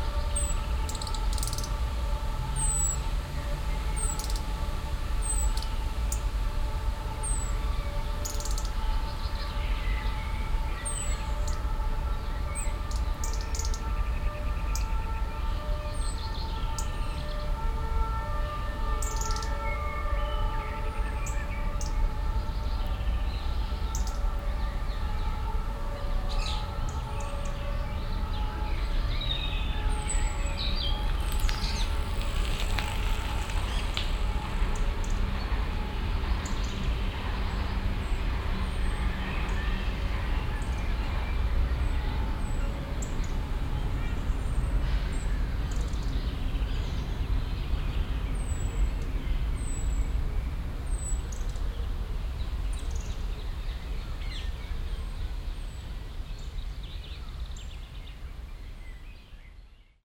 cologne, stadtgarten, gehweg nord, parkbank
auf gehweg an parkbank stehend -
stereofeldaufnahmen im juni 08 - nachmittags
project: klang raum garten/ sound in public spaces - in & outdoor nearfield recordings